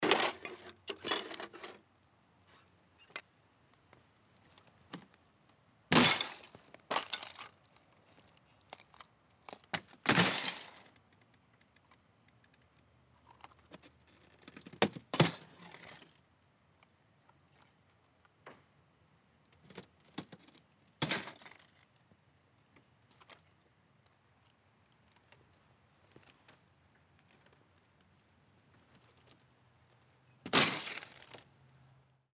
Valici, Rjecina river, Walking on ice
Walking and braking ice - frozen Rjecina river.